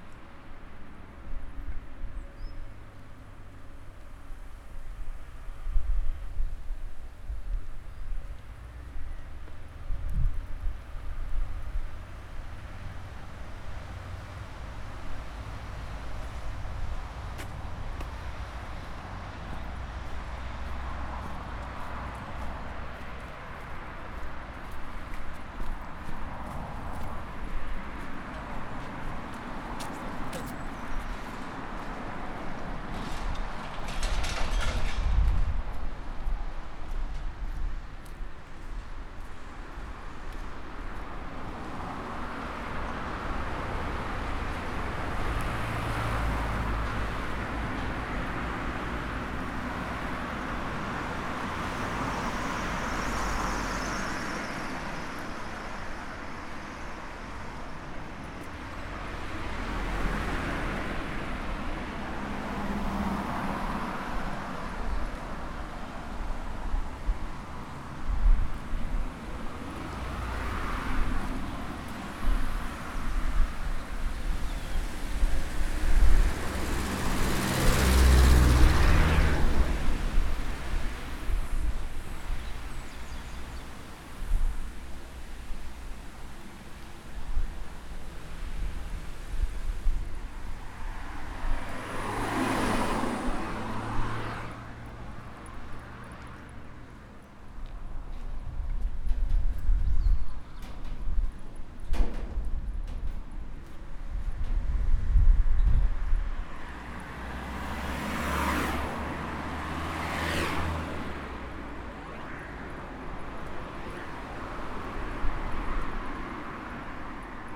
{"title": "ESAD.CR, Caldas da Rainha - Walk: ESAD.CR---Casa Bernardo", "date": "2014-02-25 14:00:00", "description": "Walk from ESAD.CR to Casa Bernardo\nRecorded w/ Zoom H4n.", "latitude": "39.39", "longitude": "-9.14", "timezone": "Europe/Lisbon"}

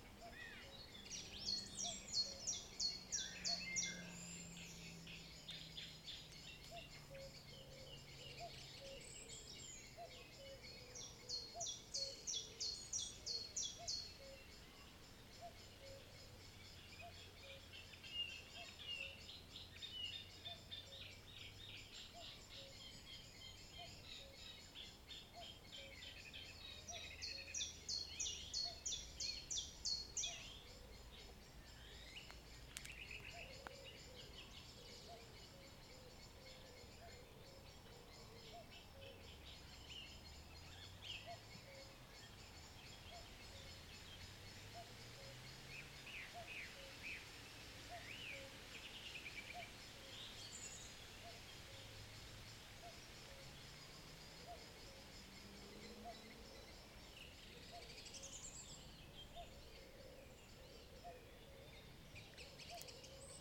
{"title": "RSPB Fowlmere, Royston, UK - Bird and Nature Reserve", "date": "2020-06-11 20:35:00", "description": "RSPB Fowlmere nature reserve. Cuckoo, wood pigeons and other birds join the evening chorus accompanied by the light rustling of the wind in the trees and distant cars in the distance.\nZoom F1 and Zoom XYH-6 Stereo capsule to record.", "latitude": "52.09", "longitude": "0.05", "altitude": "23", "timezone": "Europe/London"}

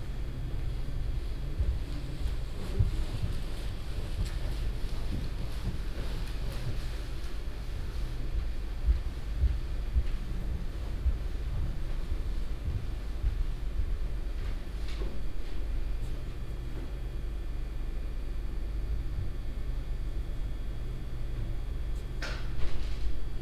{"title": "luxembourg, abbey neumünster, empty theatre", "date": "2011-11-16 16:07:00", "description": "Inside a small theatre before the performance. The sound of the electric light dimmer and some conversation of the technician.\ninternational city scapes - topographic field recordings and social ambiences", "latitude": "49.61", "longitude": "6.14", "altitude": "253", "timezone": "Europe/Luxembourg"}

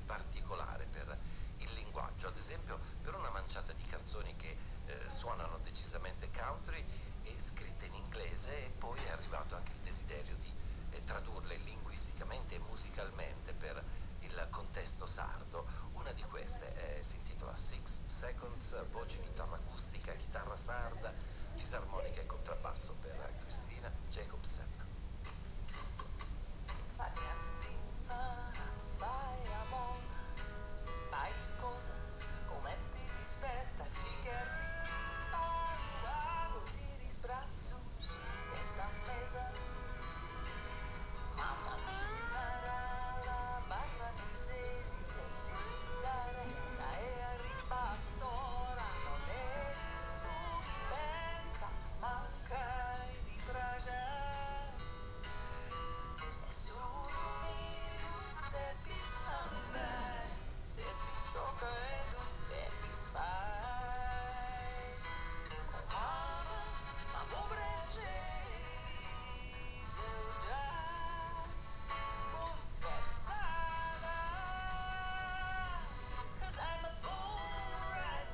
{"date": "2021-04-01 23:51:00", "description": "\"Round Midnight Easter Friday on terrace with radio in the time of COVID19\": soundscape.\nChapter CLXV of Ascolto il tuo cuore, città. I listen to your heart, city\nThursday April 1st 2021. Fixed position on an internal terrace at San Salvario district Turin, One year and twenty-two days after emergency disposition due to the epidemic of COVID19. Portable transistor radio tuned on RAI RadioTre.\nStart at 11:51 p.m. end at 00:11 a.m. duration of recording 20’12”", "latitude": "45.06", "longitude": "7.69", "altitude": "245", "timezone": "Europe/Rome"}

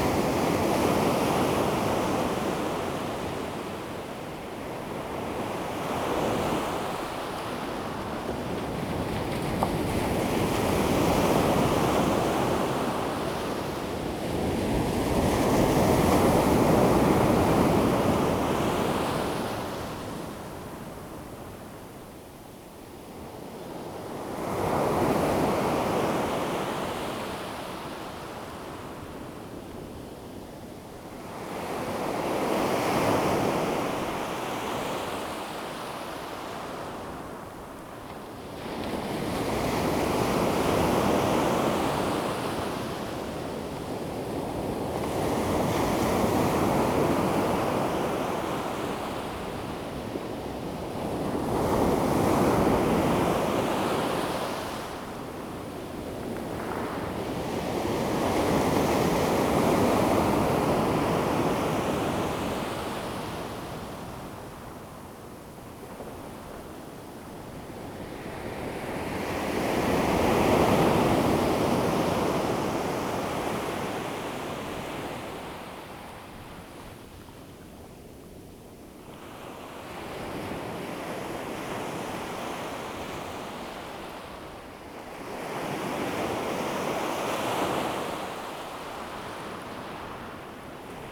{"title": "Taitung City, Taiwan - At the seaside", "date": "2014-09-04 16:25:00", "description": "At the seaside, Sound of the waves, Very hot weather\nZoom H2n MS + XY", "latitude": "22.70", "longitude": "121.09", "altitude": "4", "timezone": "Asia/Taipei"}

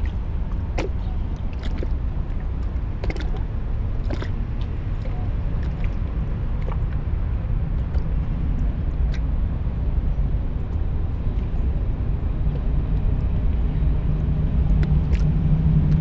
{"title": "Märkisches Ufer, Mitte, Berlin, Germany - along the river Spree", "date": "2015-09-02 15:22:00", "description": "lapping waves, clogs, gulls, crow, S-bahn, walking ...\nSonopoetic paths Berlin", "latitude": "52.51", "longitude": "13.41", "altitude": "38", "timezone": "Europe/Berlin"}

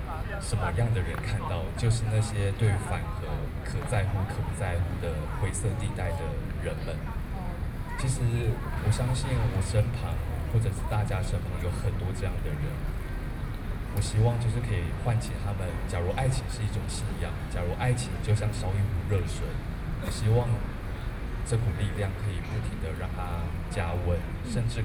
{"title": "Zhongshan S. Rd., Taipei City - nuclear power", "date": "2013-08-09 20:05:00", "description": "Idol actor, Opposed to nuclear power plant construction, Binaural recordings", "latitude": "25.04", "longitude": "121.52", "altitude": "8", "timezone": "Asia/Taipei"}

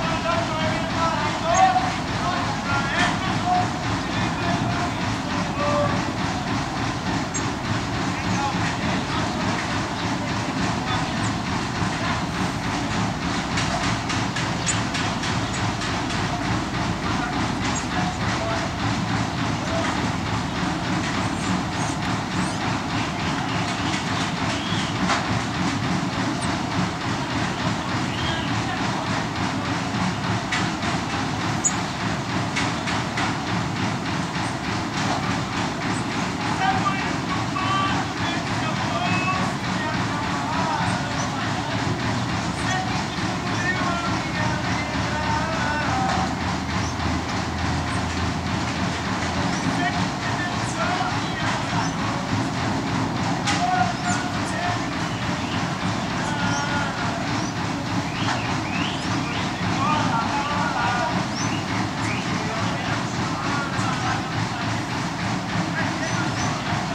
{"title": "Fazenda Chiqueirão, noisy in potatoe production line machine. Poços de Caldas - MG, Brasil - noisy in potatoe production line machine with bad singer", "date": "1988-05-20 09:06:00", "description": "Trabalhadores selecionando batatas para sementes em uma máquina seletora na Fazenda Chiqueirão. Poços de Caldas, MG, Brasil. Imiscuido ao ruído da máquina está um trabalhador cantando canções de rádio e executando muito mal a canção, de forma irritante pela má qualidade de sua cantoria. O som da máquina é ritmado e altamente barulhenta.", "latitude": "-21.80", "longitude": "-46.64", "altitude": "1280", "timezone": "America/Sao_Paulo"}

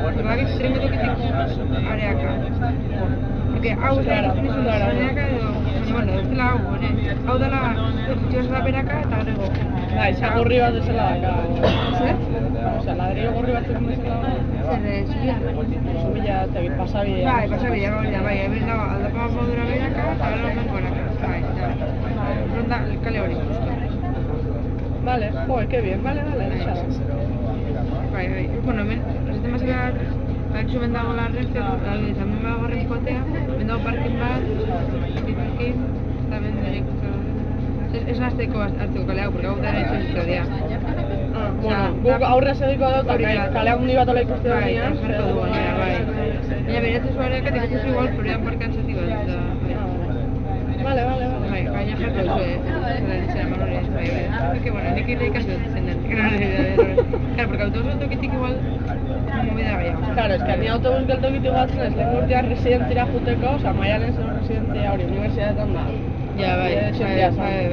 {"title": "Deustu, Bilbao (basque country)", "description": "2009/4/1. 8:40 a.m. Ambience in the bus to the university. Annoying people talking about nothing!", "latitude": "43.27", "longitude": "-2.95", "altitude": "21", "timezone": "Europe/Berlin"}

